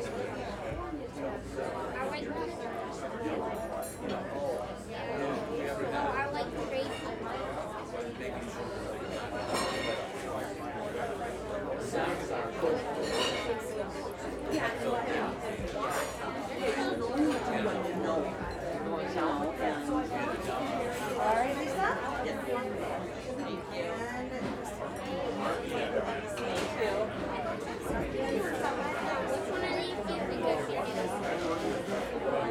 The sounds of lunch time at Donatellis
Minnesota, United States